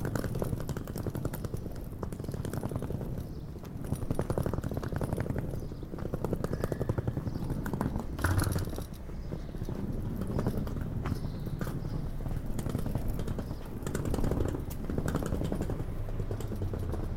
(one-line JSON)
{"title": "St. Gallen (CH), walking with suitcase", "description": "walking with a trolley, changing subsoil (pebbles, concrete etc.). recorded june 8, 2008. - project: \"hasenbrot - a private sound diary\"", "latitude": "47.43", "longitude": "9.38", "altitude": "663", "timezone": "GMT+1"}